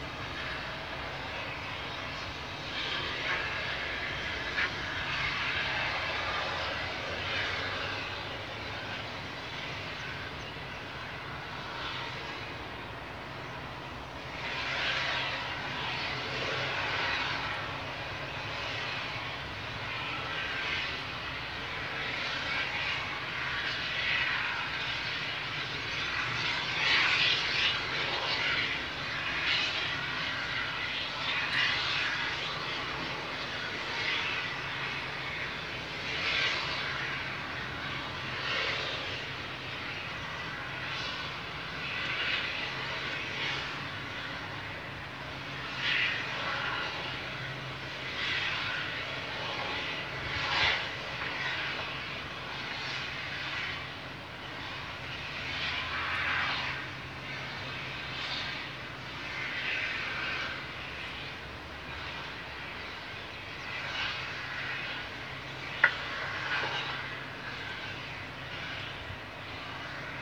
Poznan, balcony - tv tower maintenance
two workers power-washing and sanding a tv tower 300 meters away from the balcony. pressured hiss blows out around the district.
29 May 2014, ~09:00